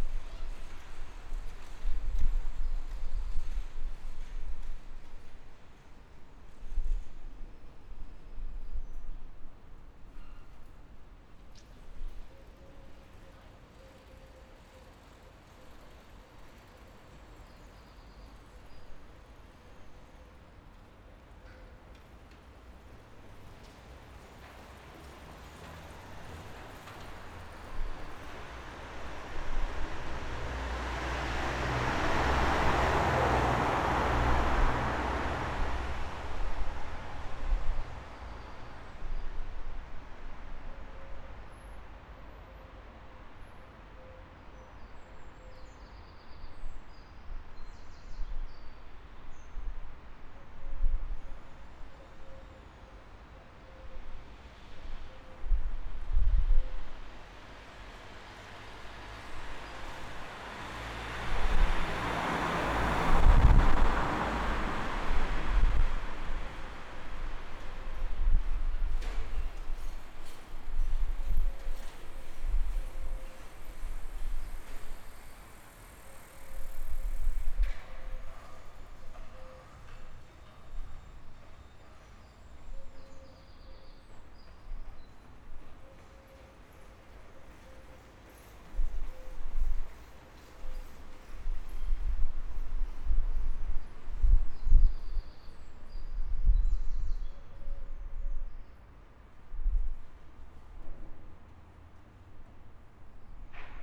Kanaalweg Tunnel Underneath 17/04/2019 @ 9.52Am. A repaired file, less clipping from the wind. A fine art masters project on spatial interaction. recorded under the tunnel/underpass on kanaalweg on my journey to and from my studio. Recorded just after rush hour.
Overste den Oudenlaan, Utrecht, Netherlands, 17 April 2019, 09:52